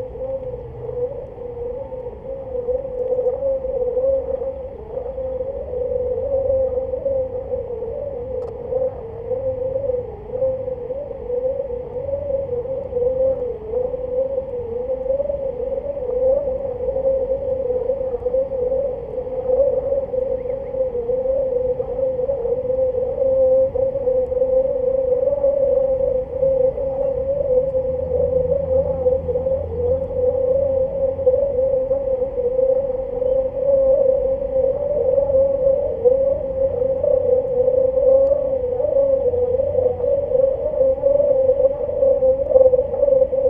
Waterharp installation Kaunas, Lithuania
Recorded during the 'Environmental Sound Installation' workshop in Kaunas
13 April